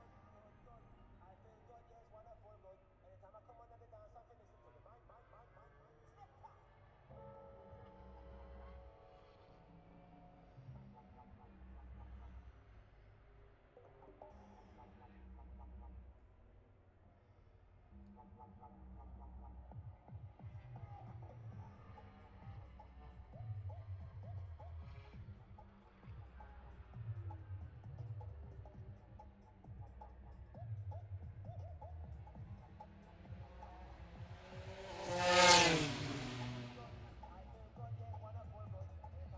Towcester, UK - british motorcycle grand prix 2022 ... moto grandprix ...
british motorcycle grand prix 2022 ... moto grandprix free practice three ... bridge on wellington straight ... dpa 4060s clipped to bag to zoom h5 ... plus disco ...
England, United Kingdom, 6 August 2022